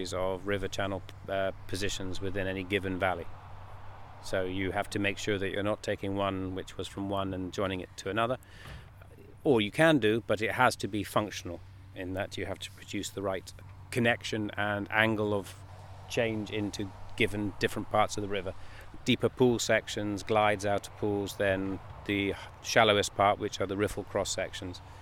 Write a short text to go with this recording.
Field interview with ecohydrologist Andrew Bowden Smith on the Eddleston Water near Peebles. Andrew works for a team who are restoring meanders to a stretch of river which was artificially straightened in the 19th century. This is an experimental project aimed partly at flood mitigation and also to meet the EU's Water Framework Directive. He talks about the challenges of designing a riverbed to emulate the waterflow of a natural river. Google map shows the straight water course, which now has several meanders and looks very different!